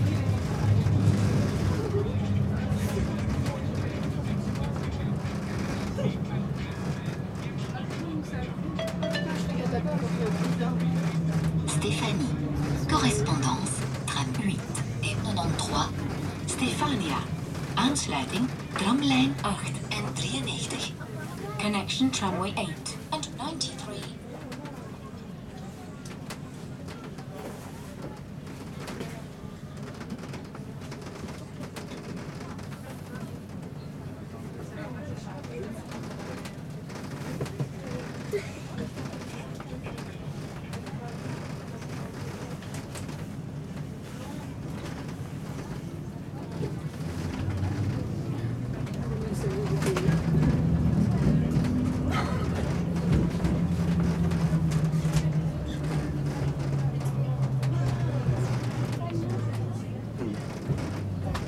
Modern Tram.
Tech Note : Olympus LS5 internal microphones.
Brussel-Hoofdstad - Bruxelles-Capitale, Région de Bruxelles-Capitale - Brussels Hoofdstedelijk Gewest, België / Belgique / Belgien, May 2022